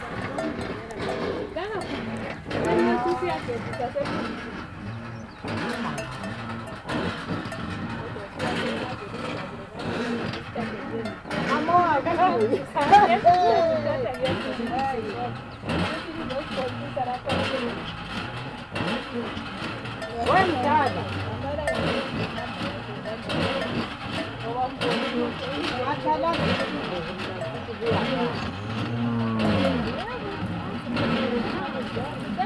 Lupane, Zimbabwe - At the borehole…
On the way, we are passing a few homesteads, getting drawn into conversations, and then joined on the way to the borehole, where some other women from the village are already busy pumping... It’s a “heavy borehole” the women say, the water only comes slowly, reluctantly and after much labour of four women pumping…
26 October 2013